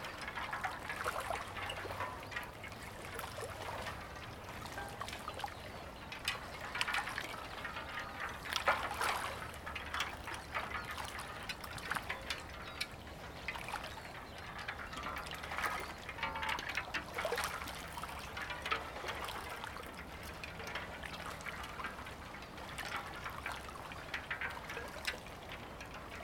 a cove full of thin broken ice sheets, clanking in the waves.
recorded with Zoom H2n set on a mossy tree, 2CH mode, windshield.
Svealand, Sverige, 9 February 2020